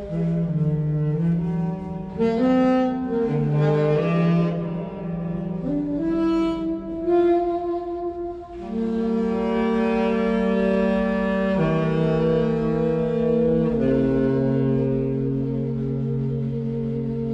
Straße des 17. Juni, Großer Stern, Berlin, Deutschland - Berlin; vor dem Tunnel zur Siegessäule
Vor dem Tunnel kommen Altsax und Tenorsax zum Spiel
14 March 2021